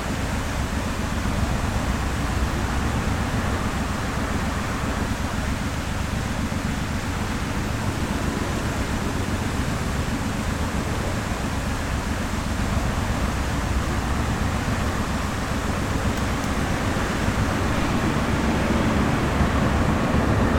De Weteringschans/Frederiksplein, Amsterdam, The Netherlands - Fieldrecording for Improvising on Film: Fountain, traffic